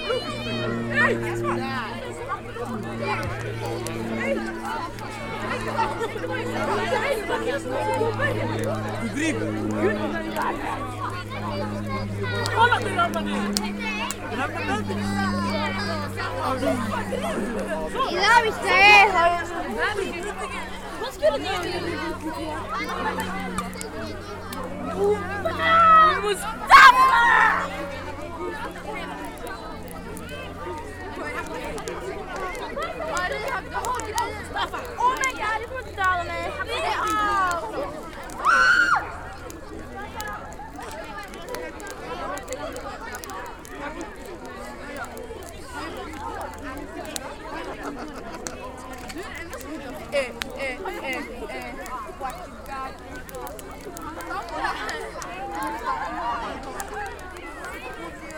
Fisksätra, Svartkärrsstigen - Nuit de Walpurgis
Nuit de Walpurgis, tous autour du feu.